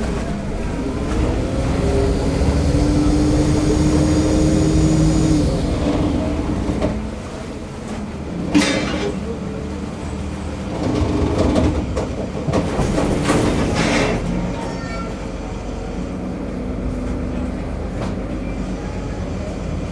venezia vaporetto linea 2
vaporetto zattere -> palanca